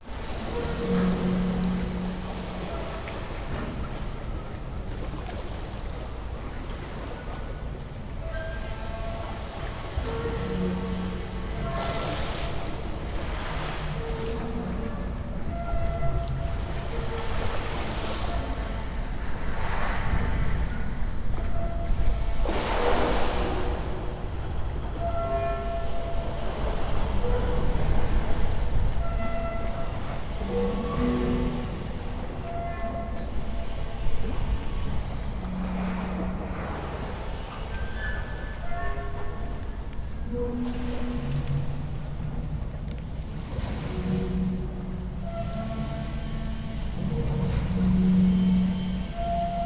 Dover, Kent, UK - Buoys in Dover Port
Metal buoys being struck by waves, some construction work going on in background.
October 2012